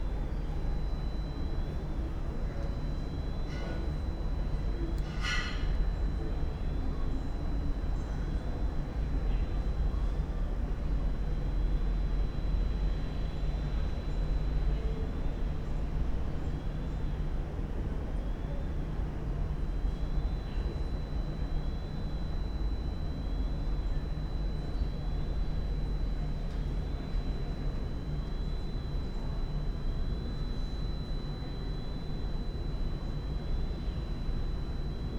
R. da Mãe de Água, Lisboa, Portugal - backyard, night ambience
night ambience in a backyard, two distinct electrical tones, unclear source, one high-pitched, the other alternating, both audible the whole night, keeping me awake... (Sony PCM D50, Primo EM172)